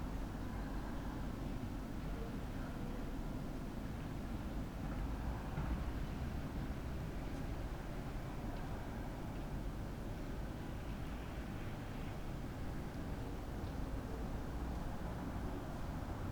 while windows are open, Maribor, Slovenia - night, radio